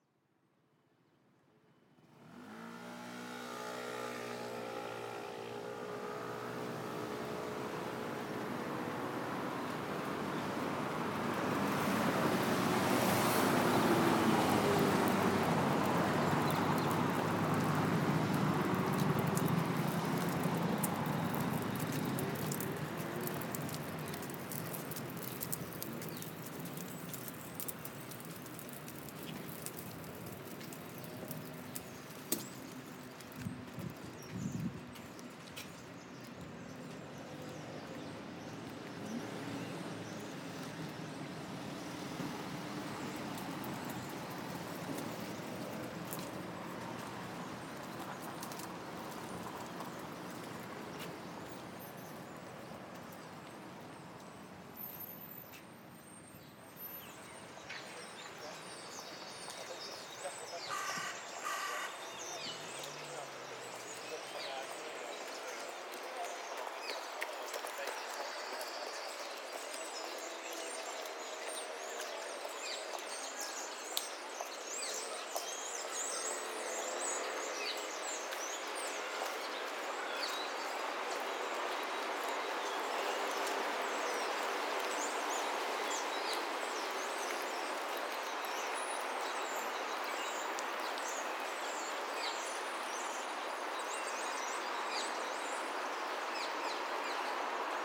Avenue Michal, Paris, France - Walk in Buttes-Chaumont from the main gate to the lake
Walk-in, by winter day, Buttes-Chaumont parc from the main gate to the lake, I took several ambiances in front of the main gate and into the parc: Traffic outside of the parc, the chirp of the bird in the woods, and the screech of the children, snatches of jogger's conversations and stroller's
France métropolitaine, France, 22 January 2021